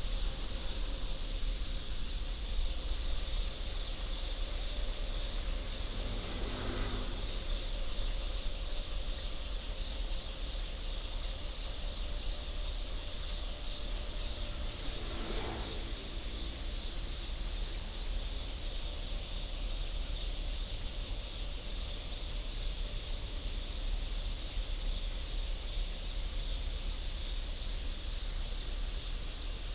Birds and Cicada sound, train runs through, Traffic sound, Factory sound, Insects